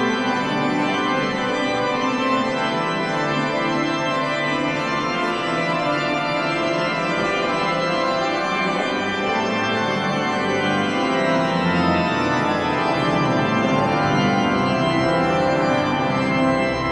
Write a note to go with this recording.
End of the mass in the Sint-Rombouts cathedral. Baptisms of children and organ, people going out of the cathedral, silence coming back.